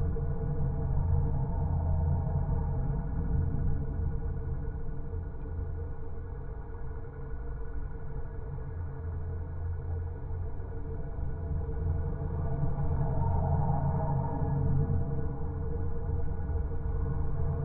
Metallic Bridge - Geofon recording - 1800-255 Lisboa, Portugal - Metallic Bridge - Geofon recording
Geofon recording of a pedestrian metallic bridge, over a busy highway. Recorded with a zoom H5 and a LOM Geofon.
Grande Lisboa, Área Metropolitana de Lisboa, Portugal, October 2020